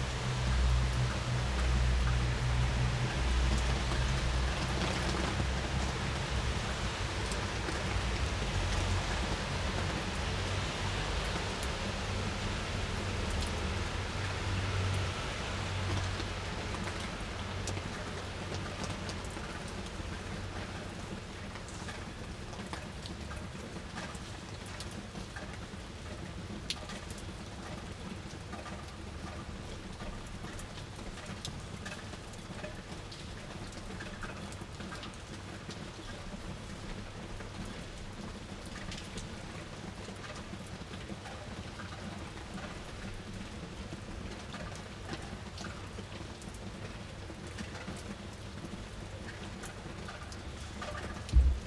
Avenue Jean Jaures, Paris, France - pluie confinée du balcon
Labour day ORTF recording from balcony during confinement, rain and drops on the balcony